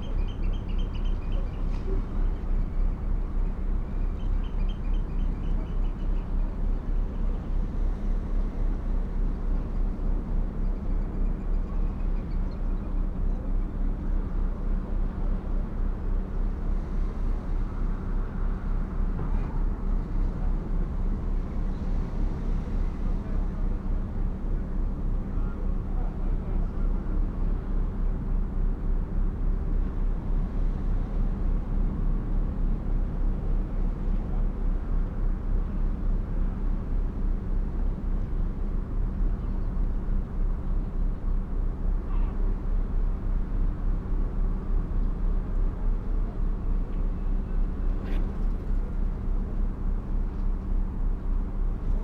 Crewe St, Seahouses, UK - starlings on the harbour light ...
starlings on the harbour light ... xlr sass to zoom h5 ... bird calls from ... lesser black-backed gull ... herring gull ... grey heron ... wren ... ostercatcher ... harbour noises and a conversation about a walrus ...